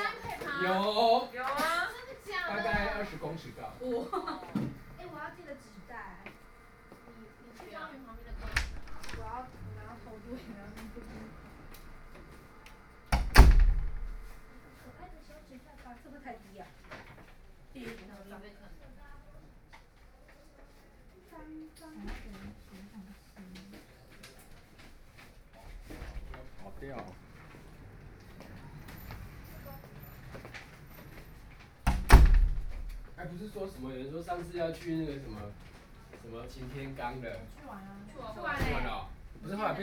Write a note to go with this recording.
A group of students chatting, Sony PCM D50 + Soundman OKM II